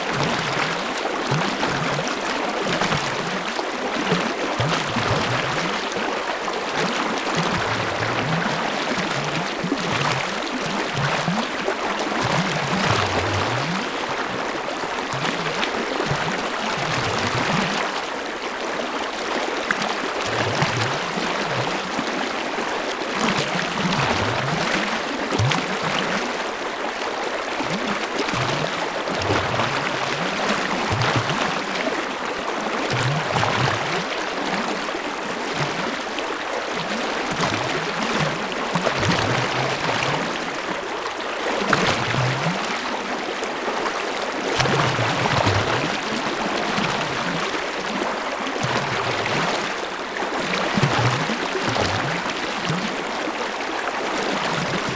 {"title": "river Drava, Loka - stone, water, void", "date": "2015-10-18 12:16:00", "latitude": "46.48", "longitude": "15.75", "altitude": "232", "timezone": "Europe/Ljubljana"}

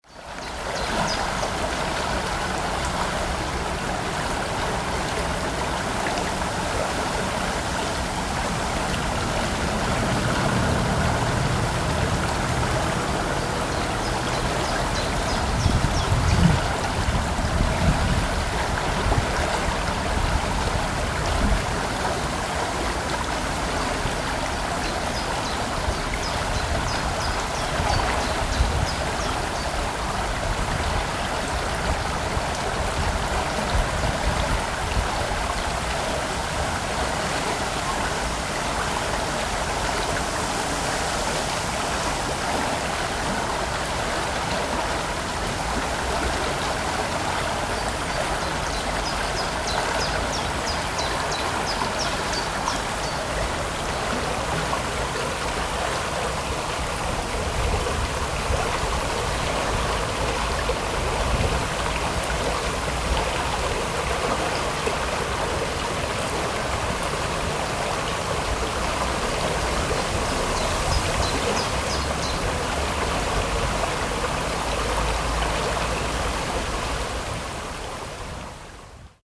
{"title": "lippstadt, water flowing", "description": "in the mediaeval times, lippstadt had two artificial waterways following the city walls. the city walls are gone, the two small rivers are still there. this is the sound of the \"nördliche umflut\" (i. e. northern round-flowing, as it were).\nrecorded june 23rd, 2008.\nproject: \"hasenbrot - a private sound diary\"", "latitude": "51.68", "longitude": "8.33", "altitude": "78", "timezone": "GMT+1"}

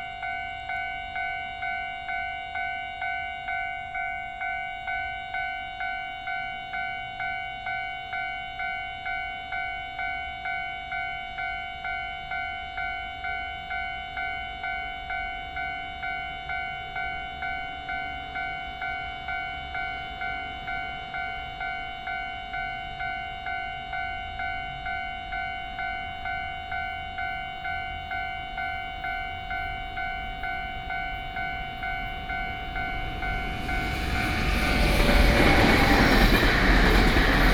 {"title": "Erkan Rd., Waipu Dist., Taichung City - in the Railroad Crossing", "date": "2017-10-09 21:14:00", "description": "in the Railroad Crossing, Traffic sound, The train passes by, Binaural recordings, Sony PCM D100+ Soundman OKM II", "latitude": "24.33", "longitude": "120.61", "altitude": "61", "timezone": "Asia/Taipei"}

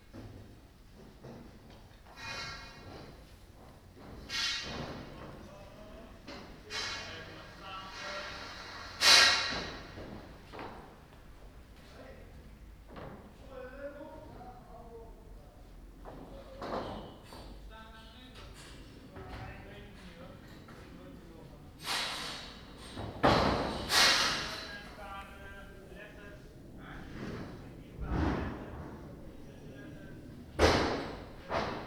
{"title": "Kortenbos, Centrum, Nederland - Building a scaffold", "date": "2011-10-01 12:33:00", "description": "It took about 2 months to build this huge scaffold around the Theresia van Ávila church in Den Haag", "latitude": "52.08", "longitude": "4.31", "altitude": "8", "timezone": "Europe/Amsterdam"}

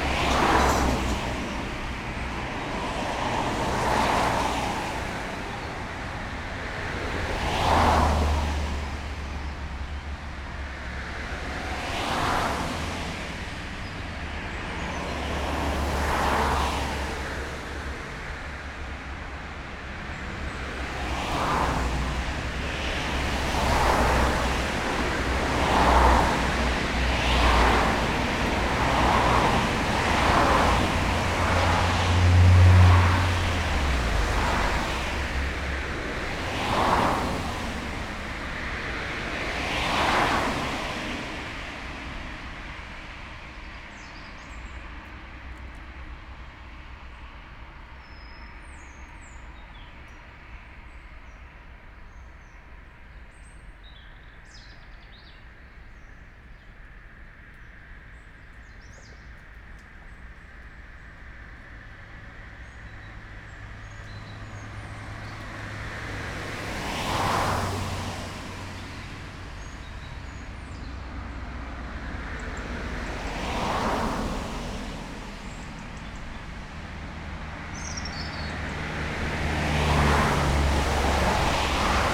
{"title": "Grčna, Nova Gorica, Slovenia - Right before entering Nova Gorica", "date": "2020-10-24 09:07:00", "description": "Recorded with Jecklin disk and Lom Uši Pro microphones with Sound Devices MixPre-3 II recorder, cca. 2-3m from the road in the bushes. Forgot to split audio left and right.", "latitude": "45.95", "longitude": "13.65", "altitude": "99", "timezone": "Europe/Ljubljana"}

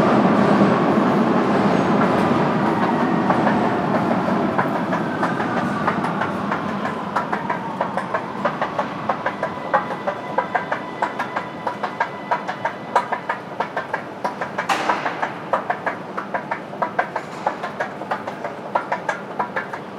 {"title": "U, karlsplatz, vienna, austria - moving, descending with the stairs, rising with the escalator", "date": "2014-03-01 18:29:00", "latitude": "48.20", "longitude": "16.37", "timezone": "Europe/Vienna"}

